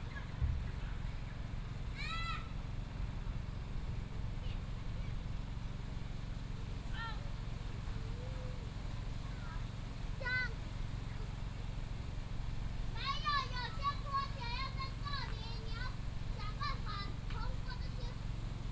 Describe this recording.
Kids play area, Pumps, School children's voice, Bird cry